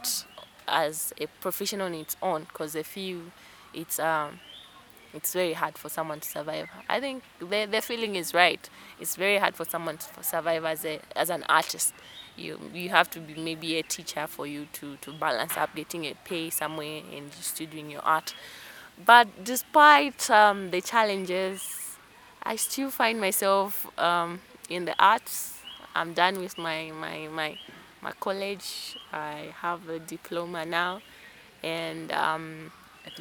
The Garden Club, Lusaka, Zambia - I’ve managed to survive…
We’ve reached the garden café with Mulenga Mulenga, settling down under a tree near the fountain pont. Mulenga gives us a vivid picture of the struggles, challenges and the triumphs of a young woman in Zambia determined to survive as a visual artist….
playlist of footage interview with Mulenga
20 July 2012, 16:39